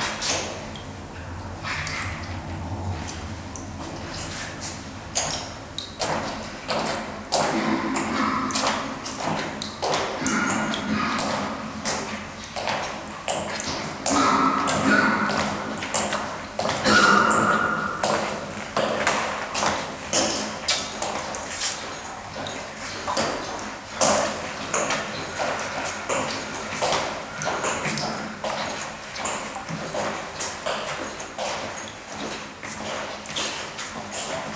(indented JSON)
{
  "title": "Père-Lachaise, Paris, France - Crematorium Cistern - Père Lachaise Cemetery",
  "date": "2016-09-23 16:30:00",
  "description": "Recorded with a pair of DPA 4060s and a Marantz PMD661.",
  "latitude": "48.86",
  "longitude": "2.40",
  "altitude": "96",
  "timezone": "Europe/Paris"
}